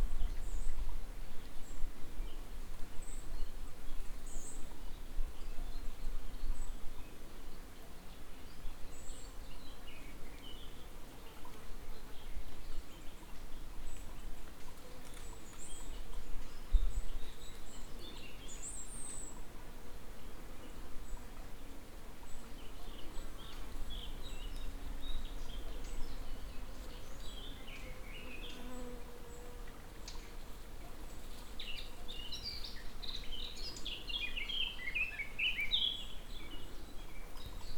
(bianaural) sharp corner of the trail. water is dugging deep depressions into the rock and flowing constantly with myriads of trickles. very peaceful atmosphere and soothing sounds of nature.